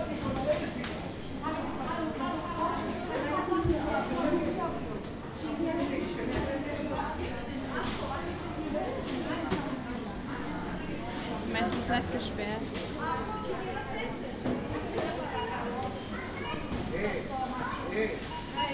Schwäbisch Gmünd, Deutschland - argument at a supermarket checkout
argument at the "kaufland" checkout in Schwäbisch Gmünds shopping mall "City Center"